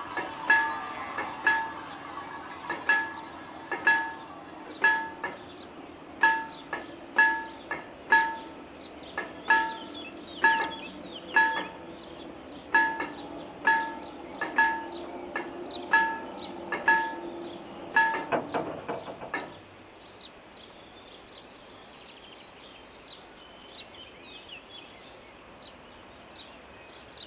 Tufo, Avellino, Italy - past tracs
The recording was made on the train between Benevento and Avelino, from its stations and surrounding landscapes. The rail line was shut down in October 2012.
Tufo Avellino, Italy, 21 July 2012, ~14:00